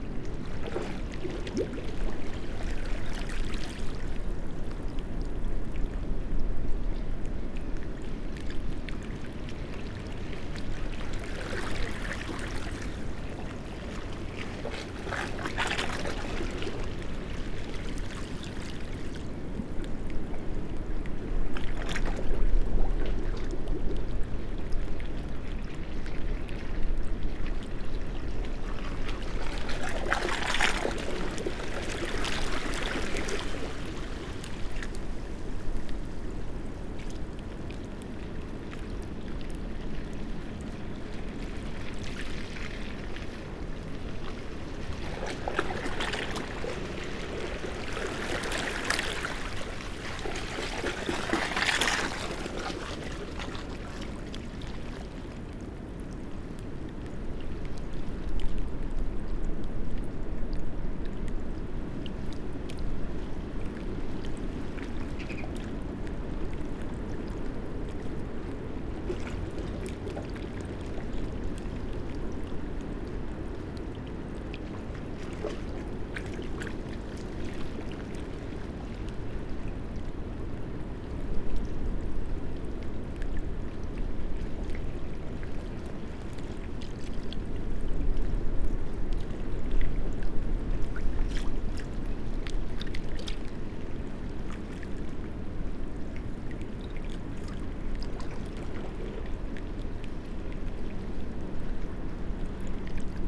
Amble Pier, Morpeth, UK - pattering waves ... up ..? and back ..?
Amble pier ... pattering waves ... waves producing a skipping effect by lapping metal stancheons that separate the main stream from a lagoon ... recorded using a parabolic reflector ...